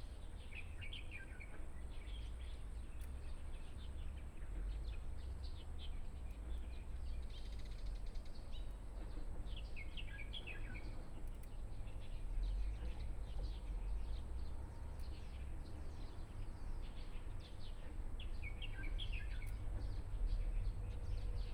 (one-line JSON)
{"title": "慈能宮, 花蓮縣順安村 - Temple Square", "date": "2014-08-27 15:02:00", "description": "Birdsong, in the Temple Square, The weather is very hot\nBinaural recordings", "latitude": "24.10", "longitude": "121.62", "altitude": "15", "timezone": "Asia/Taipei"}